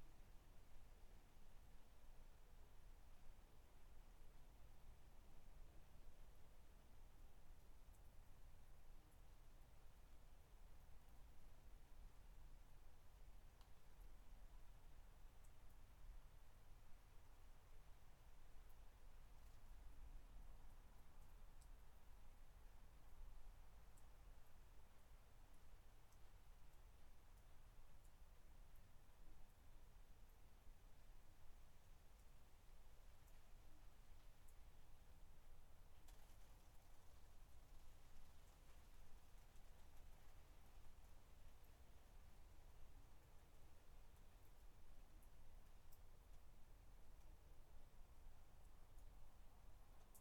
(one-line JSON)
{"title": "Dorridge, West Midlands, UK - Garden 10", "date": "2013-08-13 12:00:00", "description": "3 minute recording of my back garden recorded on a Yamaha Pocketrak", "latitude": "52.38", "longitude": "-1.76", "altitude": "129", "timezone": "Europe/London"}